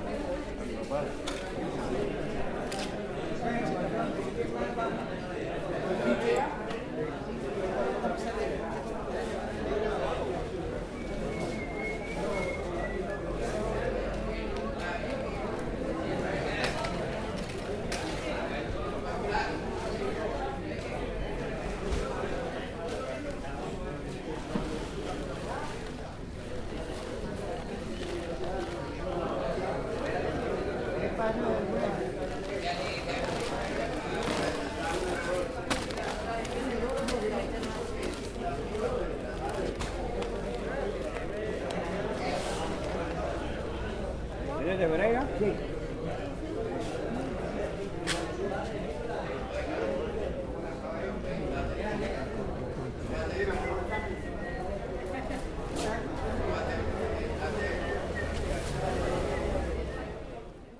Girón, Santander. Tabacalera
Santader es una zona productora de Tabaco, en las tabacaleras se negocian las distintas clases de hojas, si son para el exterior o para el relleno. El audio es el ambiente de negocios boca a boca.
Santander Dept, Colombia, 7 February 2010